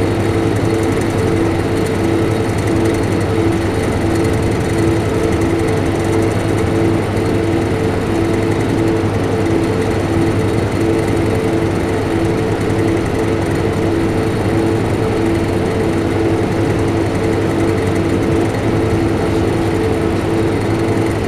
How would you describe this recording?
recorded inside of a walk-in fridge. a room in a basement which is a big fridge for storing products for a restaurant. recorder was placed right below the cooling unit. you can hear the swish and rattle of the fan. (sony d50 internal mics)